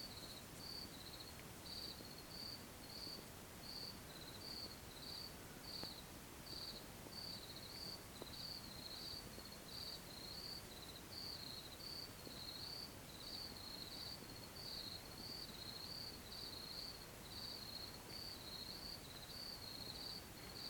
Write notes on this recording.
Crickets and Bird scarers Bombs in the distance on a quiet night. Set up: Tascam DR100 MK3, CAD e70 cardio.